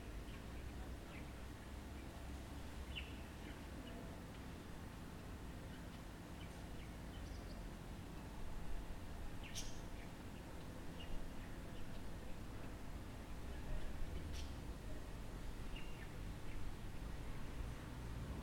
{"title": "Tenedos, Corfu, Greece - Tenedos Square - Πλατεία Τενέδου", "date": "2019-04-17 00:52:00", "description": "Birds tweeting.\nZOOM: H4N", "latitude": "39.62", "longitude": "19.92", "altitude": "13", "timezone": "Europe/Athens"}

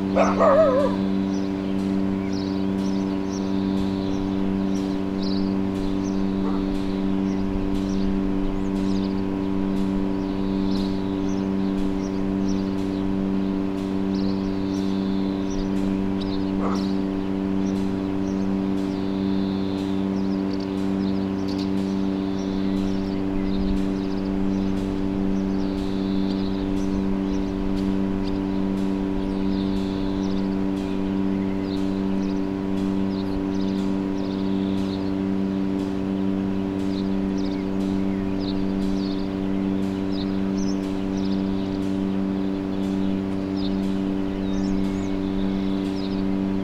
{"title": "Zielonka, Lesna road - rhythm in milk factory", "date": "2016-05-28 19:11:00", "description": "there is a small diary manufacture located in one of the sheds. One of the machines was making a steady rhythm. (sony d50)", "latitude": "54.77", "longitude": "17.73", "altitude": "16", "timezone": "Europe/Warsaw"}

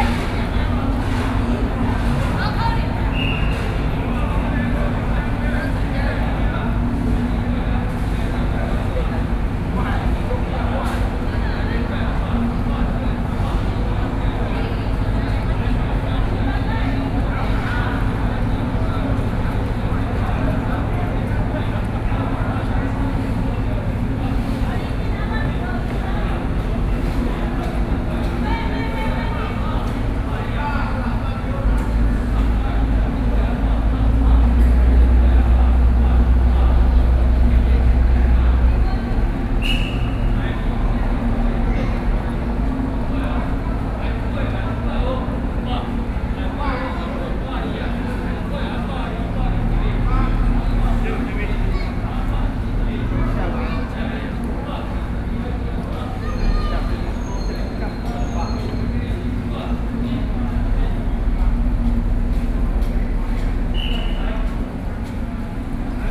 {"title": "成功漁港, Chenggong Township - In the fishing port", "date": "2014-09-06 15:20:00", "description": "In the fishing port, The weather is very hot", "latitude": "23.10", "longitude": "121.38", "altitude": "7", "timezone": "Asia/Taipei"}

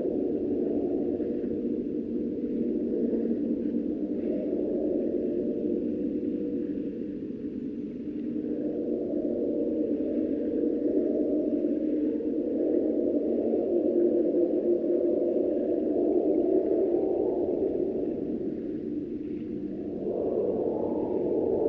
{"date": "2008-10-22 00:44:00", "description": "metal wire fence in Nida Lithuania", "latitude": "55.29", "longitude": "21.00", "altitude": "1", "timezone": "Europe/Berlin"}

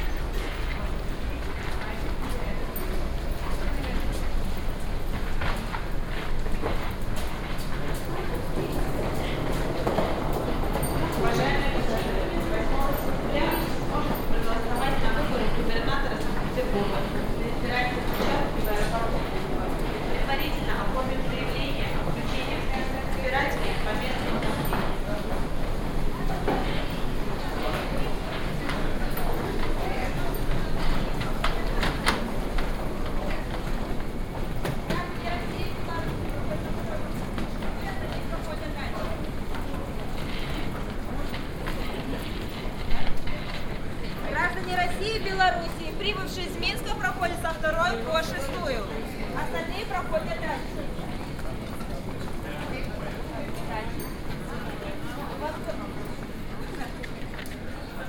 {"title": "Pulkovo Airport, Sankt-Peterburg, Russia - (610e) Airport announcements", "date": "2019-09-04 12:32:00", "description": "Airport binaural soundwalk with some announcements in the background.\nrecorded with Soundman OKM + Sony D100\nsound posted by Katarzyna Trzeciak", "latitude": "59.80", "longitude": "30.27", "altitude": "24", "timezone": "Europe/Moscow"}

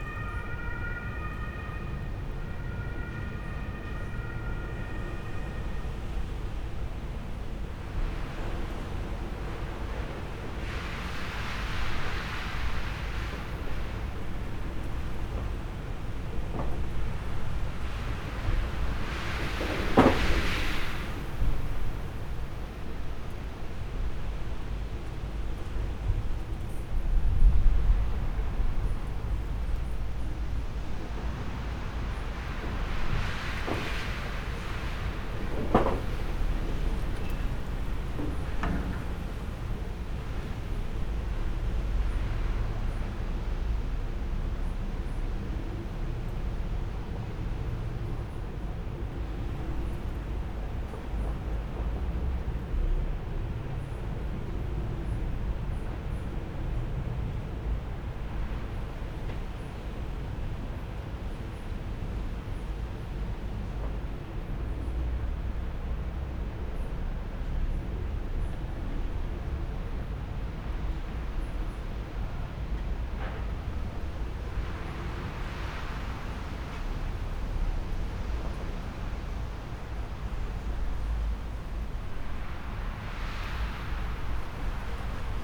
{"title": "Izanska Cesta, Ljubljana, Slowenien - rain, wind, thunder", "date": "2013-05-20 22:13:00", "description": "recording a strong wind with rain, open windows in a small house, olympus LS-14, build in microphones, center enabled", "latitude": "46.04", "longitude": "14.51", "altitude": "289", "timezone": "Europe/Ljubljana"}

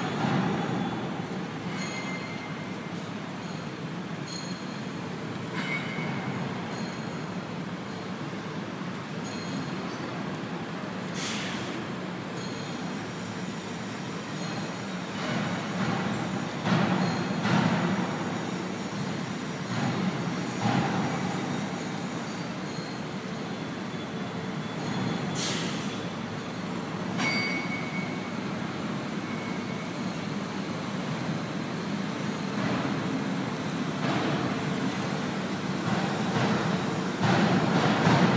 {"title": "Kuźnia Polska, ul. Górecka, Skoczów, Pogórze, Poland - Heavy Metal Forge Factory", "date": "2014-09-24 08:00:00", "description": "Souds of Forge Factory arond and inside. Recordded on iPhone 5.", "latitude": "49.79", "longitude": "18.80", "altitude": "300", "timezone": "Europe/Warsaw"}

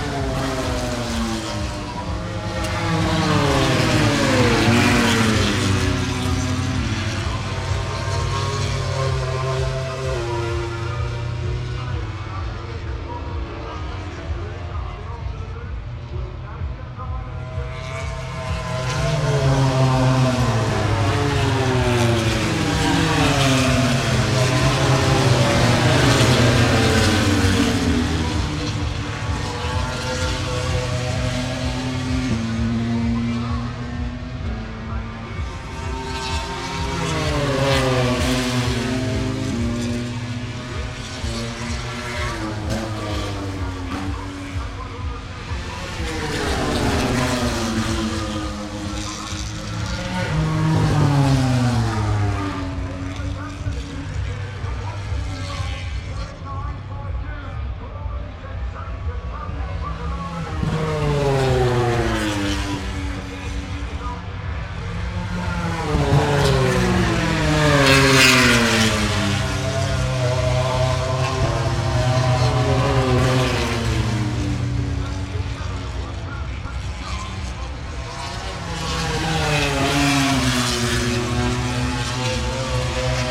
{"title": "Silverstone Circuit, Towcester, UK - british motorcycle grand prix 2019 ... moto grand prix ... fp1 contd ...", "date": "2019-08-23 10:30:00", "description": "british motorcycle grand prix 2019 ... moto grand prix fp1 contd ... some commentary ... lavalier mics clipped to bag ... background noise ... the disco goes on ...", "latitude": "52.07", "longitude": "-1.01", "altitude": "157", "timezone": "Europe/London"}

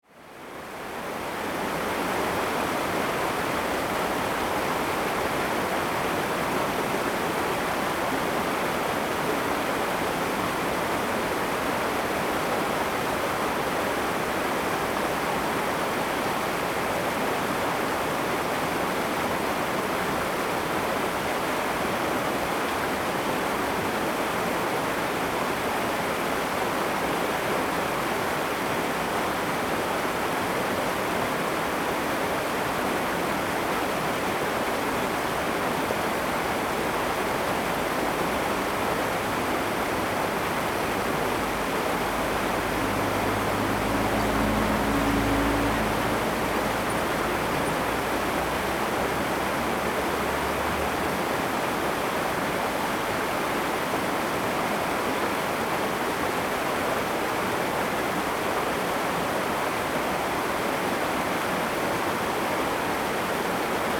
{"title": "新福里, Guanshan Township - Irrigation waterway", "date": "2014-09-07 10:20:00", "description": "Irrigation waterway, Traffic Sound, The sound of water, Very hot weather\nZoom H2n MS+ XY", "latitude": "23.05", "longitude": "121.17", "altitude": "221", "timezone": "Asia/Taipei"}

